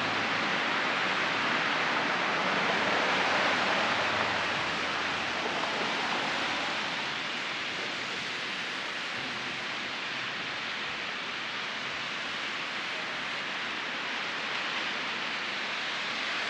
{"title": "Rue Sainte-Catherine O, Montréal, QC, Canada - Montreal Xpodium", "date": "2020-12-30 16:15:00", "description": "Recording between Rue de la Montagne & Drummond Street on Saint-Catherine St. Montreal built a tiny platform that allows you to walk onto each sidewalk. As pedestrians walk onto the metal stairs and platform, you hear each of their footsteps. While standing over passing vehicles.", "latitude": "45.50", "longitude": "-73.57", "altitude": "46", "timezone": "America/Toronto"}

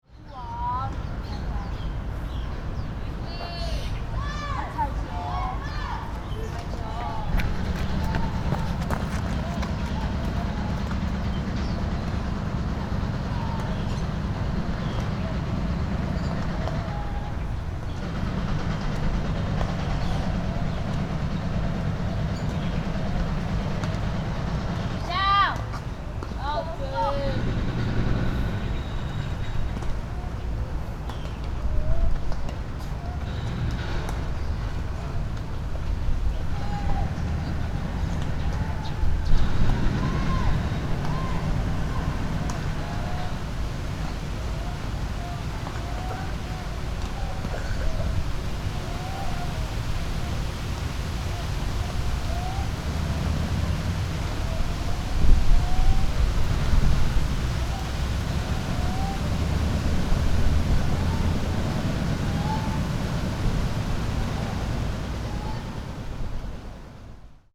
{"title": "Labor Park, Kaohsiung - in the Park", "date": "2012-04-05 15:06:00", "description": "in the Labor Park, Construction noise from afar.Sony PCM D50", "latitude": "22.61", "longitude": "120.31", "altitude": "9", "timezone": "Asia/Taipei"}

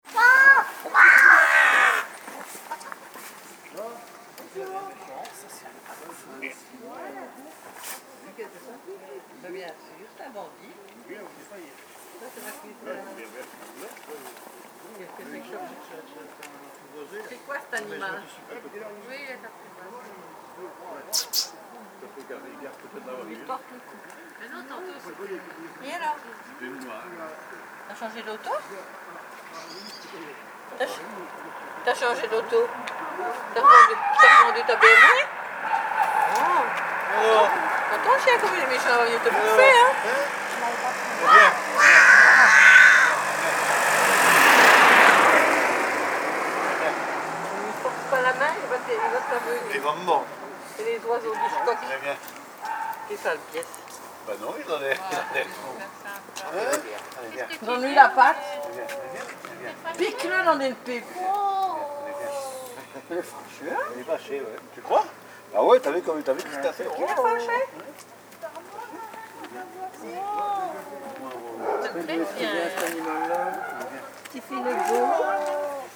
{"title": "tondatei.de: wissant, rabe, leute", "date": "2010-12-31 15:00:00", "description": "tierlaute, krähe, fotoapparat, gemurmel", "latitude": "50.89", "longitude": "1.66", "altitude": "9", "timezone": "Europe/Paris"}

